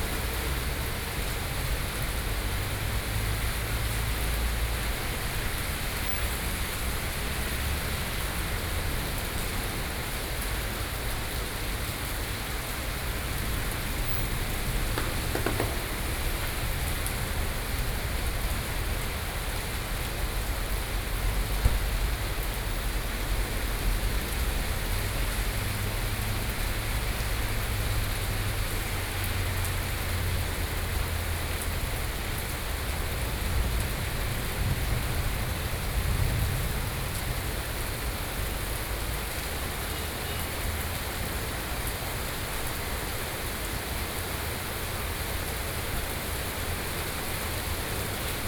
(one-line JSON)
{"title": "Zhongzheng District, Taipei - Thunderstorm", "date": "2013-07-06 15:34:00", "description": "Traffic Noise, Thunderstorm, Sony PCM D50, Binaural recordings", "latitude": "25.05", "longitude": "121.53", "altitude": "24", "timezone": "Asia/Taipei"}